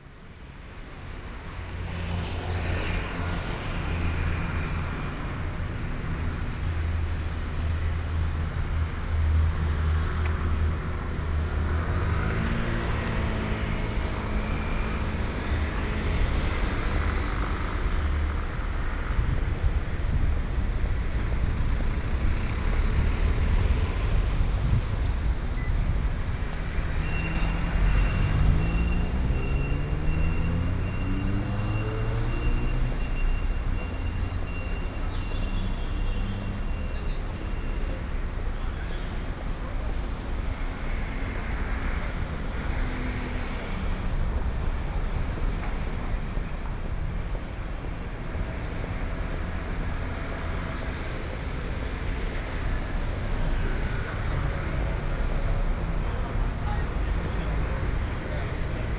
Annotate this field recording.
walking in the Street, Sony PCM D50 + Soundman OKM II